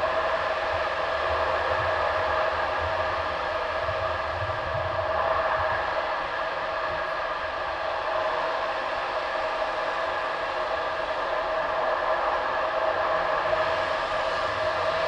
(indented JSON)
{
  "date": "2011-10-17 08:12:00",
  "description": "Brussels, Tunnel Louise with contact microphones",
  "latitude": "50.84",
  "longitude": "4.35",
  "altitude": "71",
  "timezone": "Europe/Brussels"
}